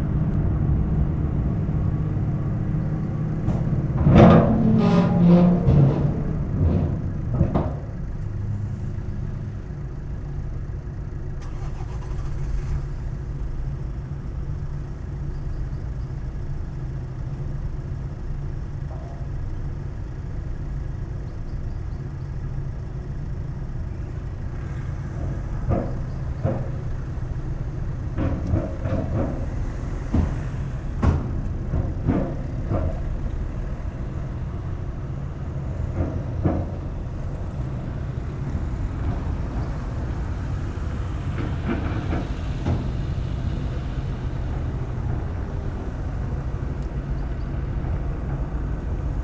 Sahurs, France - La Bouille - Sahurs ferry
We are crossing the Seine river, using the Ferry from Sahurs and going to La Bouille.
19 September 2016